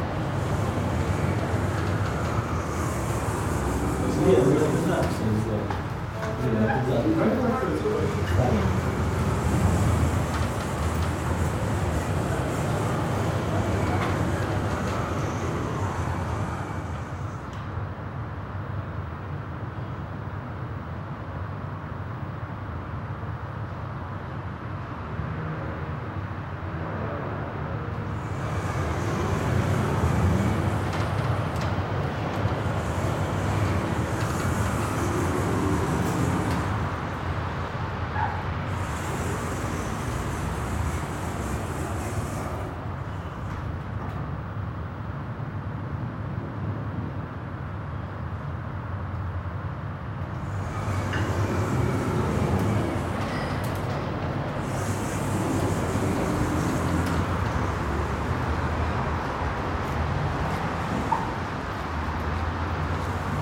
Rathauspassage entry doors
opening and closing of doors in the shopping center foyer, Aporee workshop